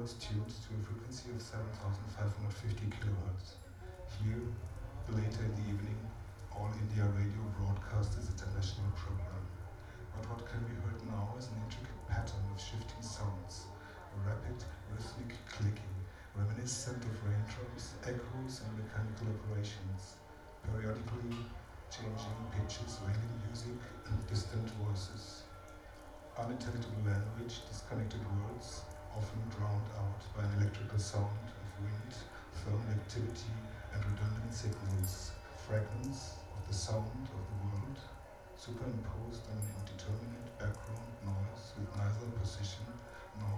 berlin, lychener straße: ausland - the city, the country & me: udo noll performs -surfing the gray line-
udo noll performs -surfing the gray line- during the evening -fields of sound, fields of light- curated by peter cusack
the city, the country & me: february 6, 2015